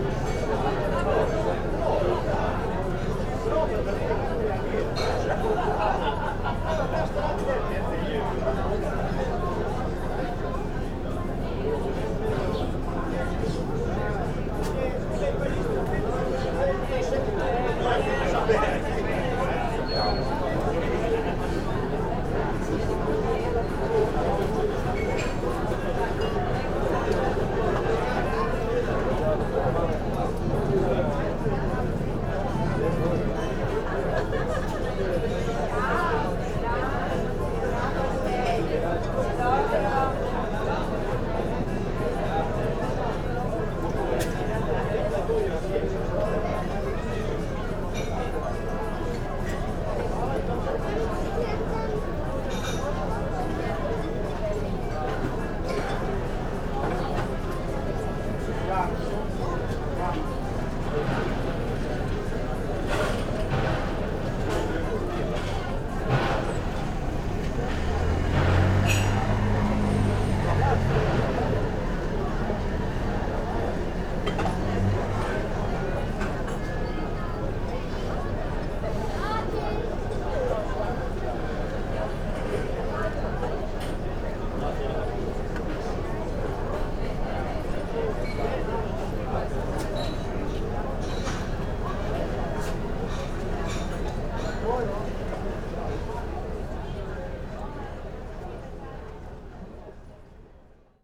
from/behind window, Novigrad, Croatia - summer morning, trumpet
high summer, bright lights ... below the window murmur of people at the cafe and restaurant, trumpet comes from one of the sealing boats